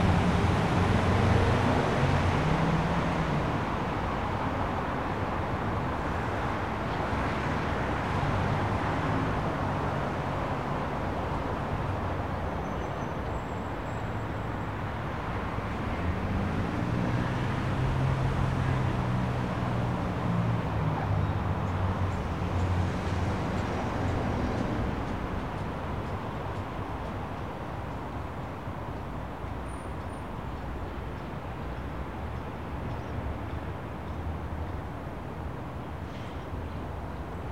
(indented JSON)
{
  "title": "Downtown Seattle - 4th & Lenora",
  "date": "1999-01-26 14:01:00",
  "description": "An average hour on a typical day in the Seattle business district. I walked all over downtown listening for interesting acoustic environments. This one offered a tiny patch of greenery (with birds) surrounded by a small courtyard (with pedestrians) and a large angled glass bank building behind, which broke up the reflections from the ever-present traffic.\nMajor elements:\n* Cars, trucks and busses\n* Pedestrians\n* Police and ambulance sirens\n* Birds (seagulls and finches)\n* Commercial and private aircraft",
  "latitude": "47.61",
  "longitude": "-122.34",
  "altitude": "51",
  "timezone": "America/Los_Angeles"
}